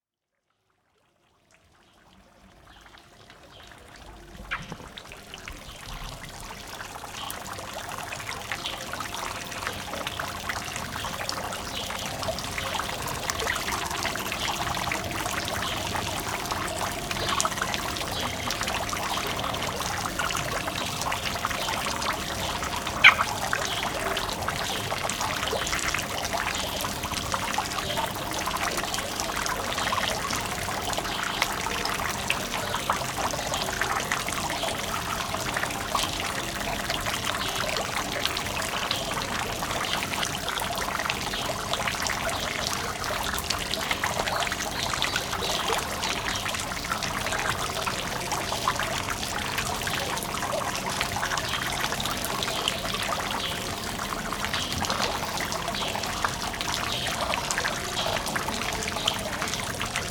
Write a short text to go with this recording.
Ambiente del patio de la Casa Invisible, Centro Cultura de Gestion Ciudadana